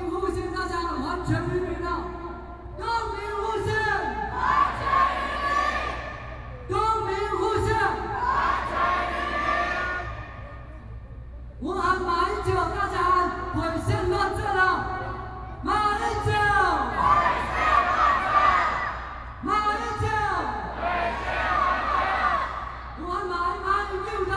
Jinan Rd., Taipei City - Protest Speech
Shouting slogans, Binaural recordings, Sony PCM D50 + Soundman OKM II